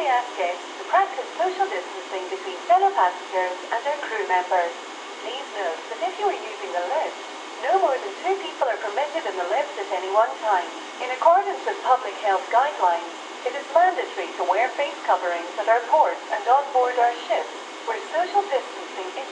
W Bank Rd, Belfast, UK - Stenaline Ferry – Belfast to Cairnryan – Pandemic Intercom
Recorded with a Sound Devices MixPre-3 and a pair of DPA 4060s.